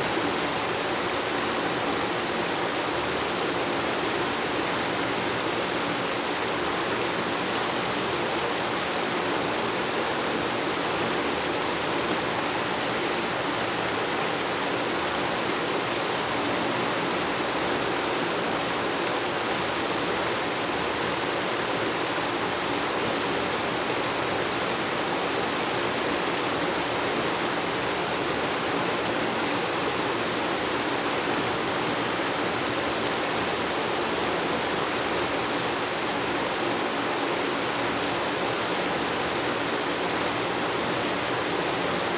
Königsheide, Südostallee, Berlin - ground water treatment plant
close up of the ground water treatment plant (Grundwasseraufbereitungsanlage) in Königsheide forest. Since a few years ground water quality gets worse because of the contamination of former industrial plants in surrounding areas. Extensive prevention infrastructure has been installed and must be operated permanently.
(Tascam DR-100 MKIII, Superlux SL502 ORTF)
Berlin, Germany